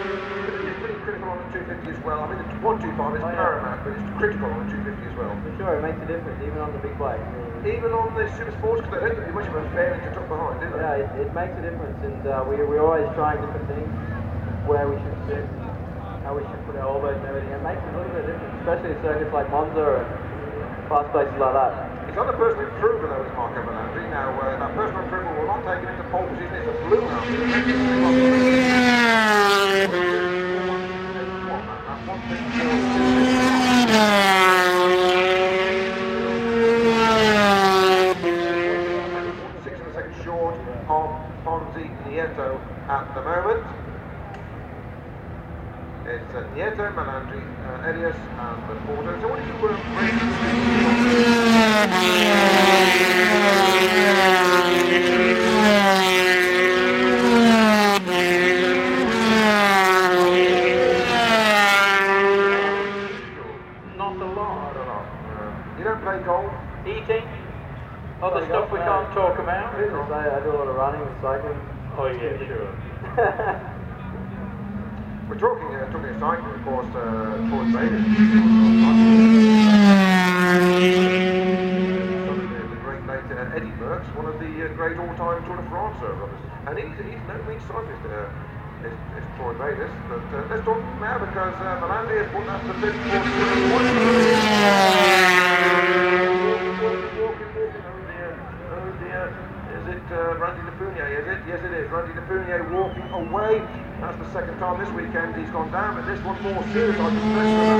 British Motorcycle Grand Prix 2002 ... 250 qualifying ... one point stereo mic to minidisk ... commentary ... time optional ...
Derby, UK, 13 July 2002, 15:00